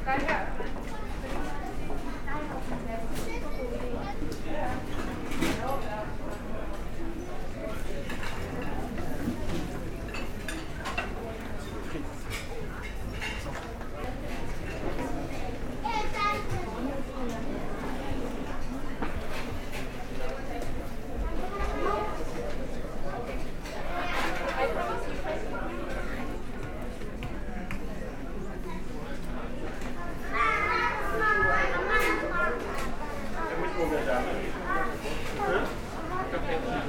{"title": "Rødby, Denmark - Fehmarn Belt ferry", "date": "2019-04-18 15:25:00", "description": "On the Femern Bælt, a strait separating Germany (town Puttgarden) to Denmark (town Rødby). The link is made by a ferry. Walking into the boat, some various sounds of the rooms. All the bottles placed in the shops vibrate !", "latitude": "54.63", "longitude": "11.33", "altitude": "2", "timezone": "Europe/Copenhagen"}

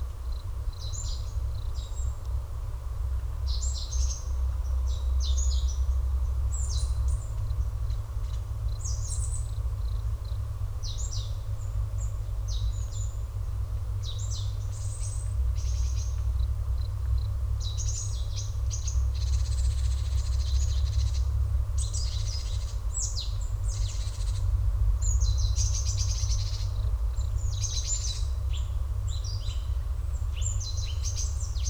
{"title": "Abandoned woodpeckers house on Jung-do 딱따구리의 빈집 (中島)", "date": "2019-10-07 06:45:00", "description": "...a cavity in a tree in a remnant wood, well formed entrance and deep recess...possibly a woodpecker's nest, now abandoned...low enough to the ground to be accessible...just after dawn on Jung-do and already the sounds of nearby construction work become audible...story of rapid urban expansion...", "latitude": "37.88", "longitude": "127.69", "altitude": "77", "timezone": "Asia/Seoul"}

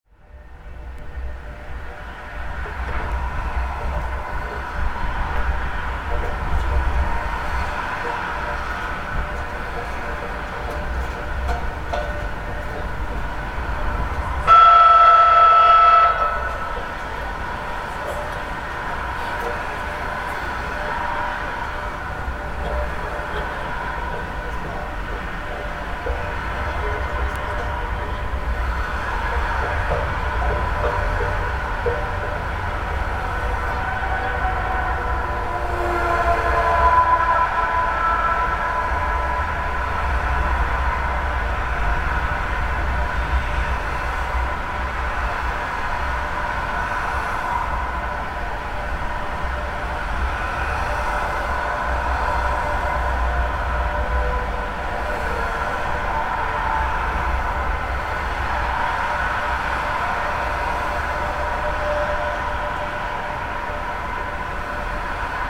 {"title": "pedestrian bridge over Frankenschnellweg, Nürnberg/Muggenhof", "date": "2011-04-14 16:27:00", "description": "recorded with contact mics during the sound of muggenhof workshop by Cramen Loch and Derek Holzer", "latitude": "49.46", "longitude": "11.03", "altitude": "301", "timezone": "Europe/Berlin"}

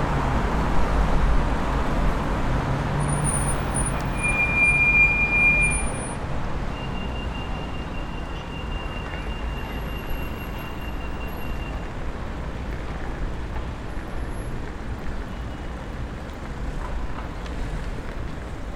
{"title": "Great Victoria Street", "date": "2020-08-28 15:30:00", "description": "Next to the Europa Hotel, there is the Belfast Bus Station for local and distance commute around the island. Multiple times people were going in and out, either leaving/returning from work, a weekend trip, or just heading home from the city. People are trying to find the normal in their lives, certain areas have reopened, and others remain closed. People and vehicle traffic have retaken the sound of the city, masking the clarity of intricate sonic activities that were occurring throughout the lockdown. It begs to ask, what are we missing every day when we put ourselves everywhere?", "latitude": "54.60", "longitude": "-5.93", "altitude": "13", "timezone": "Europe/London"}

